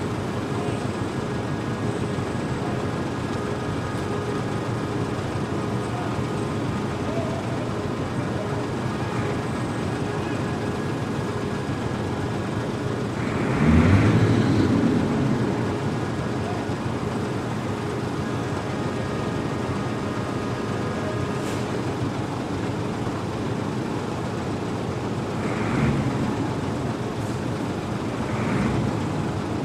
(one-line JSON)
{"title": "Boulogne-sur-Mer, Quai de l'Europe - BsM, Quai de l'Europe", "date": "2009-04-15 21:06:00", "description": "Unloading a cargo vessel. Zoom H2.", "latitude": "50.73", "longitude": "1.57", "altitude": "4", "timezone": "Europe/Berlin"}